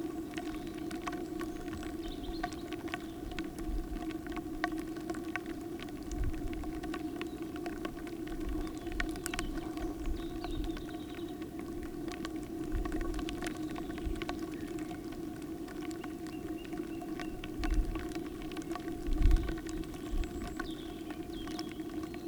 Lithuania, Nolenai, found object: pipes - found object: pipes
rubbish left by people in nature...this time it were some kind of pipes from automobile. microphones placed inside and raining autside